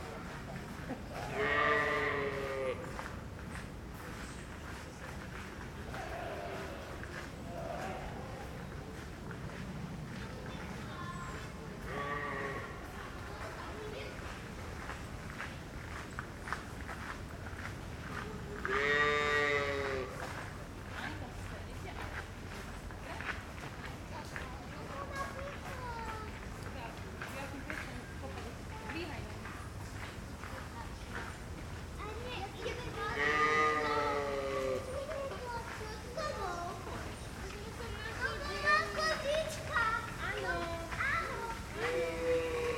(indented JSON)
{
  "title": "Schonbrunn tourist sheep, Vienna",
  "date": "2011-08-18 14:25:00",
  "description": "sheep in the touristy Schonbrunn park",
  "latitude": "48.18",
  "longitude": "16.31",
  "altitude": "243",
  "timezone": "Europe/Vienna"
}